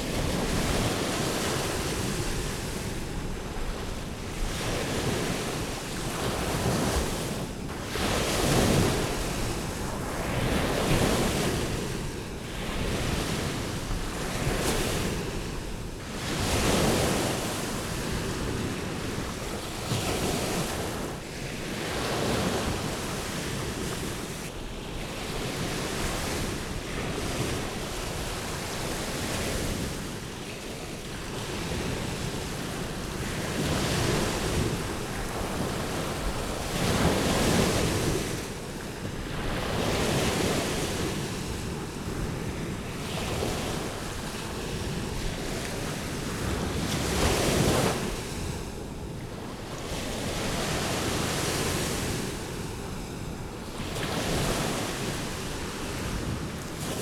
Unnamed Road, Gdańsk, Polska - Mewia Łacha
This sounds were recirding during the soundwalks organised during the project: Ucho w wodzie.( Ear in the water.) This is a place of nature reserve, where normally walks are forbidden, but walked there with guardes who watched out the birds nests.
Gdańsk, Poland, 18 June, ~13:00